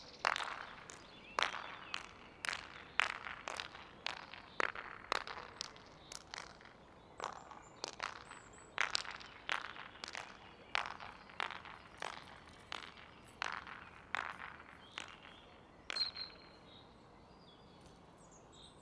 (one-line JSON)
{"title": "inside the pool, mariborski otok - clapping echos", "date": "2017-04-10 16:50:00", "description": "flattering echo sensing inside the empty pool, by OR poiesis and unosonic\n(Sony PCM D50)", "latitude": "46.57", "longitude": "15.61", "altitude": "258", "timezone": "Europe/Ljubljana"}